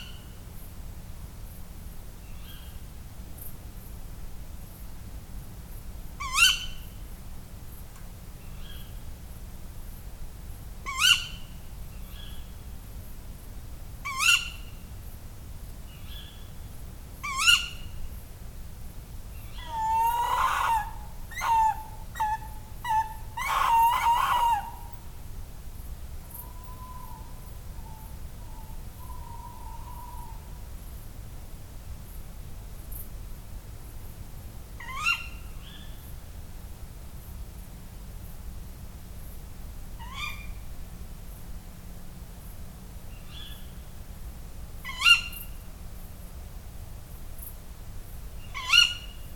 Full moon rise on this quiet english town. Its midsummer and quite hot. You can here the owls echoing through the landscape.
10 August 2022, 23:50